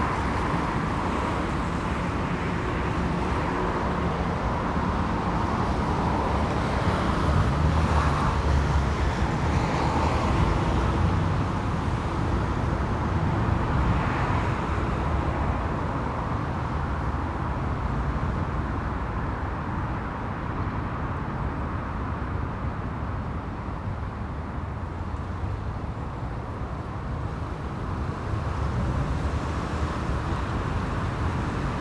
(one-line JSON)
{"title": "Contención Island Day 31 outer southwest - Walking to the sounds of Contención Island Day 31 Thursday February 4th", "date": "2021-02-04 10:23:00", "description": "The Drive Moor Place Woodlands Oaklands Avenue Oaklands Grandstand Road Town Moor\nBy the gate\nthat sounds the runners passing by\nIn a puddle\ngrey leaves slowly turning to soil\nA gull performs its rain dance\ntricking worms to the surface\nTraffic", "latitude": "54.99", "longitude": "-1.63", "altitude": "75", "timezone": "Europe/London"}